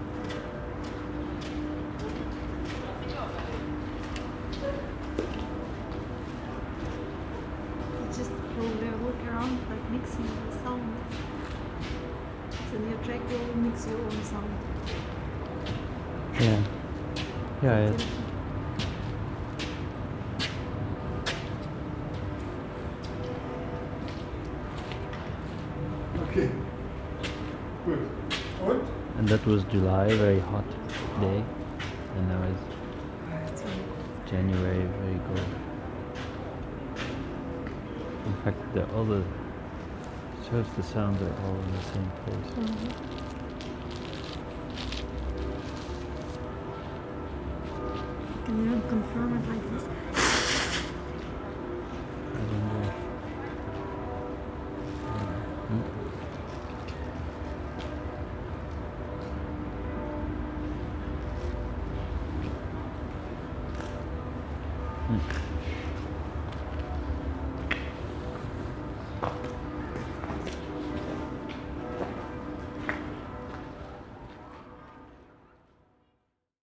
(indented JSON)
{
  "title": "returning to the Alexanderplatz TV tower in winter, Aporee workshop",
  "date": "2010-02-01 13:37:00",
  "description": "radio aporee sound tracks workshop GPS positioning walk part 6 winter 2010",
  "latitude": "52.52",
  "longitude": "13.41",
  "altitude": "43",
  "timezone": "Europe/Tallinn"
}